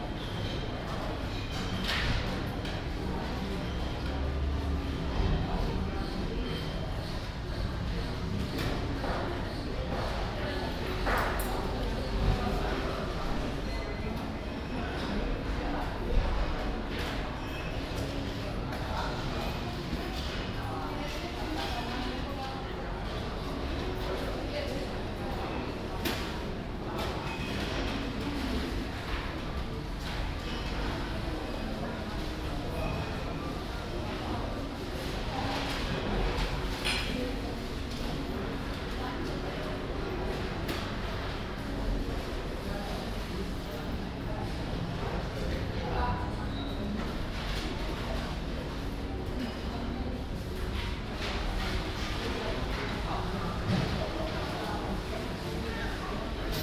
Eckernförder Str., Kiel, Deutschland - Bakery café in a supermarket
Café of a bakery shop inside a supermarket, chatting and walking people, clattering dishes, beeps from the cash registers and Muzak, from the acoustic point of view not a place to feel comfortable; Binaural recording, Zoom F4 recorder, Soundman OKM II Klassik microphone
Kiel, Germany, 11 September 2017, 14:00